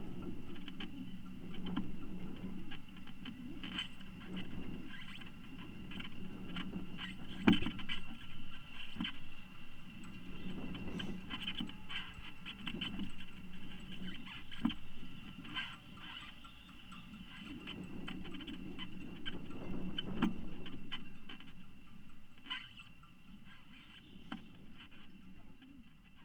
woudsend: marina - the city, the country & me: lifebuoy box
stormy day (force 7-8), contact mic on lifebuoy box
the city, the country & me: june 13, 2013